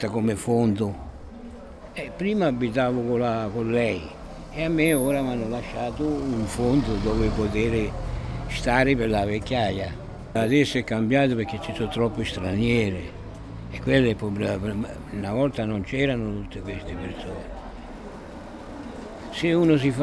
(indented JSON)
{
  "title": "Via Felice Cavallotti, Massa MS, Italia - Filippo",
  "date": "2017-08-17 17:08:00",
  "description": "Filippo è siciliano. Sta seduto tutto il giorno su una panca accanto alla bottega di alimentari. Ha lavorato nel circo. Dopo varie peripezie è arrivato a Massa e ha trovato lavoro al mercato di Piazza Mercurio. Si è messo con una donna della borgata. Ora è rimasto solo, gli è morto anche il cane, ma ama la solitudine.",
  "latitude": "44.04",
  "longitude": "10.14",
  "altitude": "64",
  "timezone": "Europe/Rome"
}